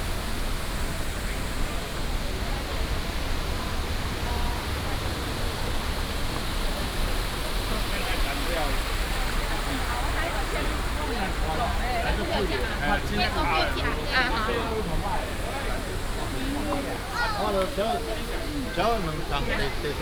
十分風景特定區, Pingxi District, New Taipei City - Walking in the Falls Scenic Area
Walking in the Falls Scenic Area
New Taipei City, Taiwan